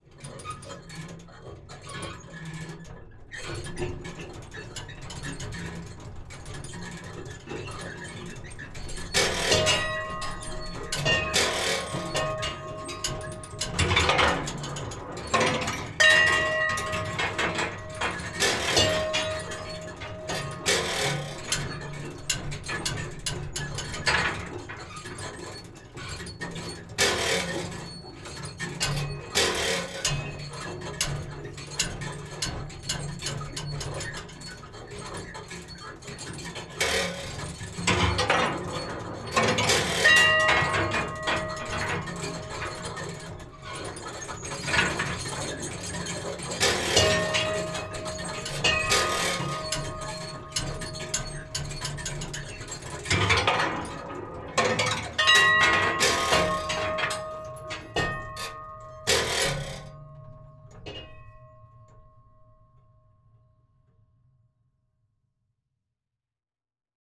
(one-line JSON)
{"title": "Kimberley Park, Falmouth, Cornwall, UK - Submarine Park Installation", "date": "2014-02-26 17:01:00", "description": "Really cool sounding interactive art installation in Kimberly Park called 'The Sub' it looked like it was made of parts from an old submarine.\nZoom H6n XY", "latitude": "50.15", "longitude": "-5.08", "timezone": "Europe/London"}